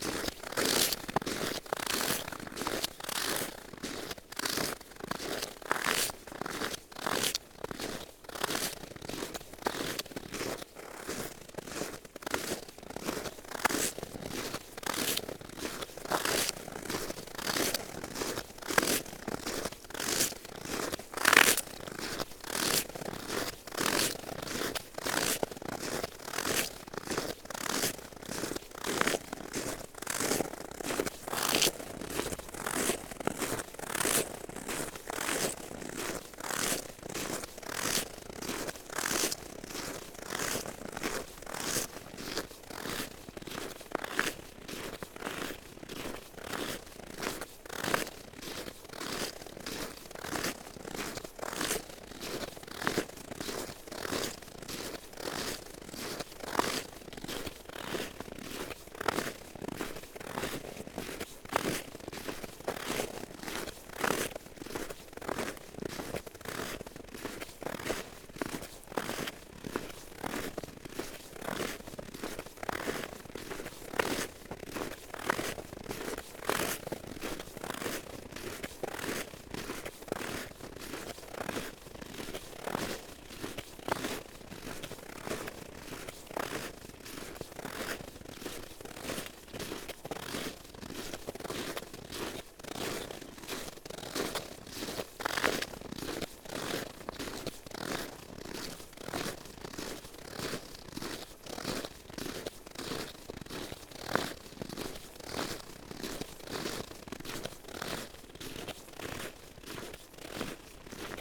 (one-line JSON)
{"title": "Green Ln, Malton, UK - walking on frozen snow and ice ...", "date": "2019-02-03 08:50:00", "description": "walking on frozen snow and ice ... parabolic ...", "latitude": "54.12", "longitude": "-0.56", "altitude": "89", "timezone": "Europe/London"}